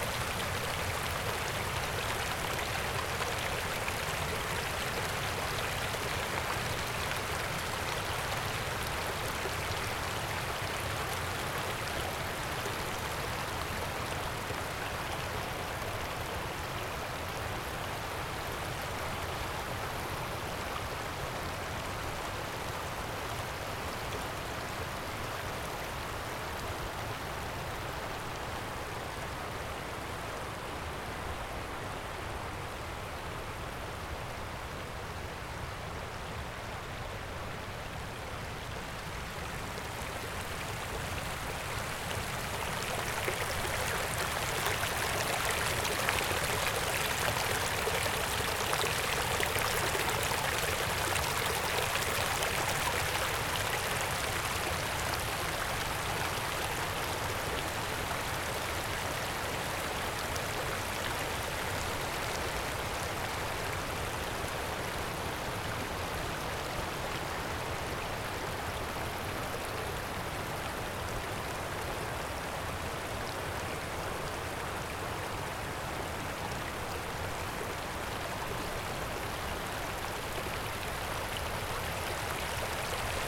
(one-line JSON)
{"title": "Alp Grüm, Poschiavo, Schweiz - Bergbachgeplauder Aqua da Palü", "date": "2016-08-03 15:14:00", "description": "Wassergurgeln -gemurmel -plätschern. Wasser im Bergbach - eifach verspielte Natur", "latitude": "46.37", "longitude": "10.02", "altitude": "1946", "timezone": "Europe/Zurich"}